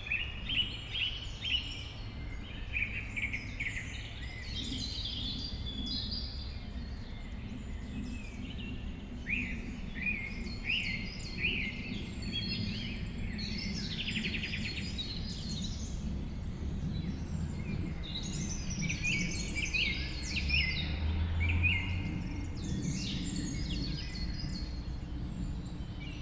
Rte Forestière de la Meunaz, Montcel, France - Grive musicienne
Forêt domaniale du Montcel, vaste espace forestier, fréquenté par les grives et de nombreux oiseaux. La cloche du Montcel.
20 April 2016, 18:00